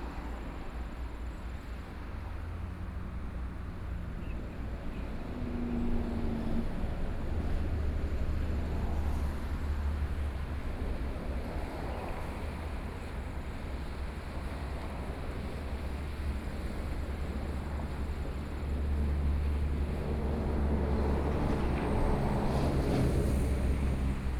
金斗宮, 頭城鎮外澳里 - Coast
Sound of the waves, Traffic Sound, Birdsong, Hot weather
29 July 2014, ~15:00